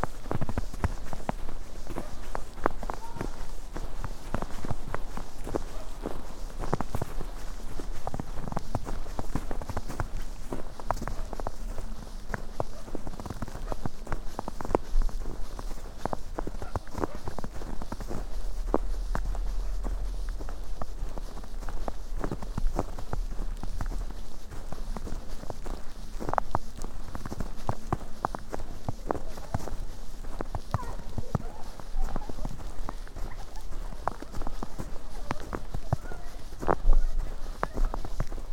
deep snow, walk, steps, sounds of winter clothes, spoken words
Maribor, Slovenia, 13 February, 16:53